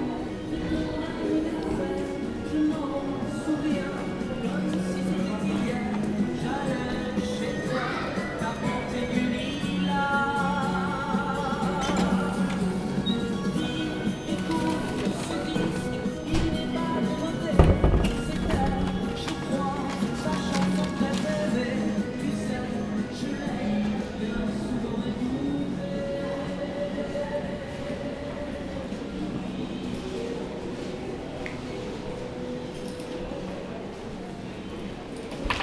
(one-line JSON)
{"title": "Namur, Belgique - Students going back home", "date": "2018-11-23 17:35:00", "description": "People walking on the street. In front of a Christmas store, two old persons find the statuettes very expensive. A family is walking, a lot of students going back home with their suitcase.", "latitude": "50.46", "longitude": "4.86", "altitude": "87", "timezone": "GMT+1"}